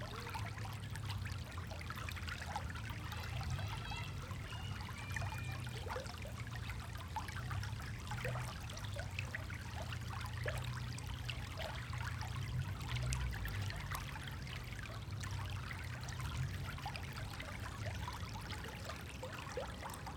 Soldiner Kiez, Wedding, Berlin, Deutschland - At the small river Panke, Berlin - Water sounds and aircraft passing by
Plätschernde Panke, überlagert vom Geräusch eines Flugzeugs.
Berlin, Germany, 10 November 2012